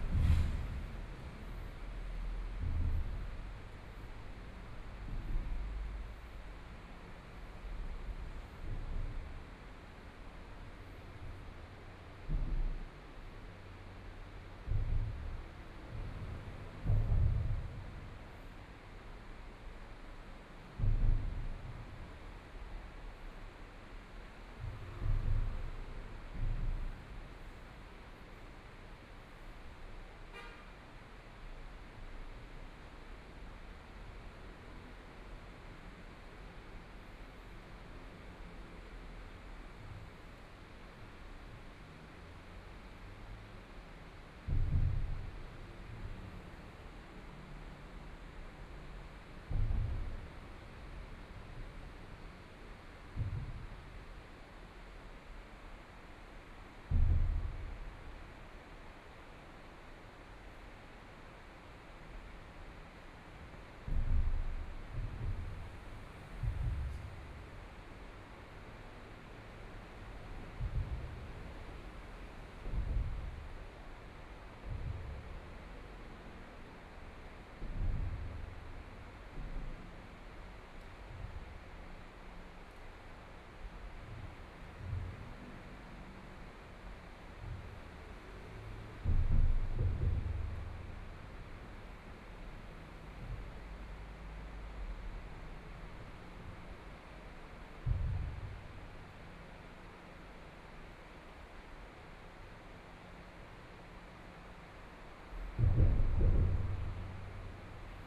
Zhongzheng Bridge, Hualien City - In the bottom of the bridge
In the bottom of the bridge
Binaural recordings
Zoom H4n+ Soundman OKM II